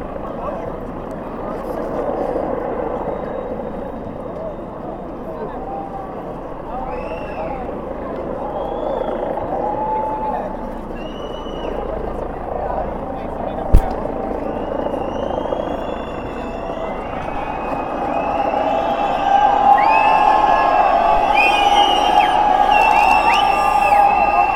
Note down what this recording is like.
Counter demonstration blokad for keep away the so-called neonazi demonstration in a street of gipsy people.